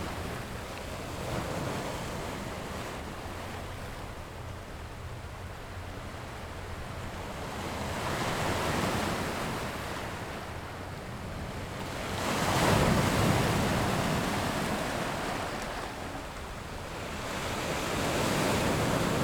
富岡港, Taitung City - nearby fishing port
Sound of the waves, At the seaside, In the nearby fishing port, The yacht's whistle, Fighter flying through
Zoom H6 XY +Rode NT4
6 September, ~09:00